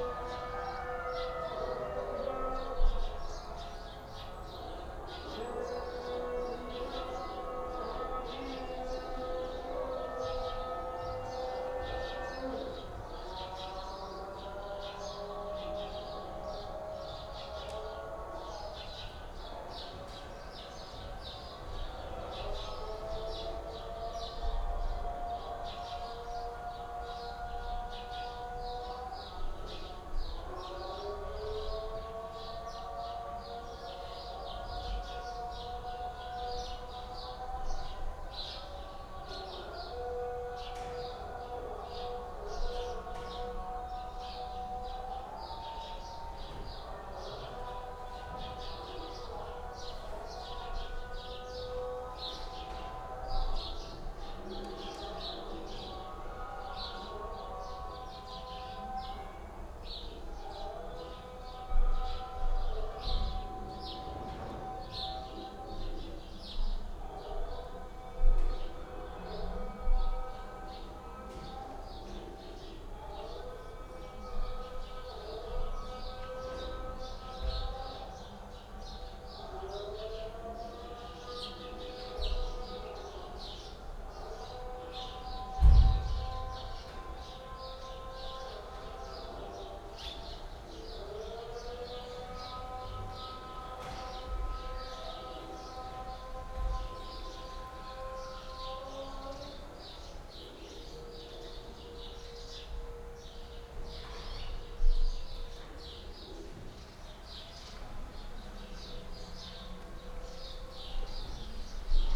Sidi Bou Amar, Marrakesch, Marokko - ambience, prayer call, howl
yard ambience, prayer calls from nearby and distant mosques, dogs start to howl
(Sony D50, DPA4060)
Marrakesh, Morocco